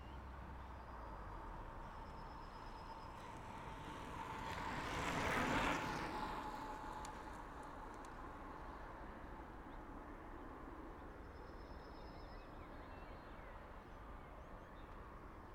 Gimonas CK annual cyling competition event. Day 1. Tempo/Time trail bicycles passing by. The predominant sounds are the carbon disc rear wheels giving the hollow sound. (condensed recording)